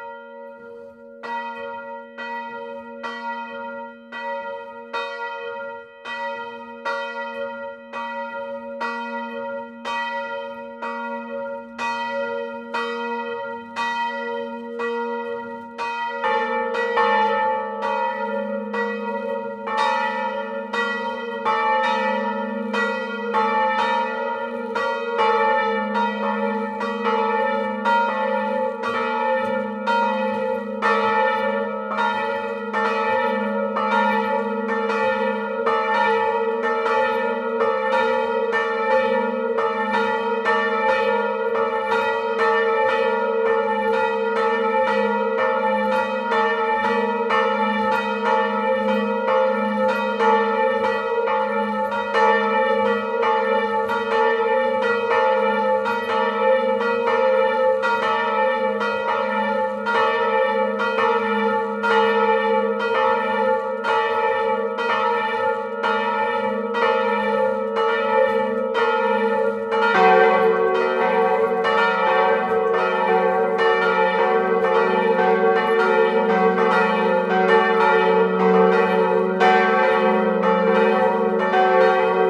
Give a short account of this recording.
La Ferté Vidam (Eure-et-Loir), Église St-Nicolas, La volée Tutti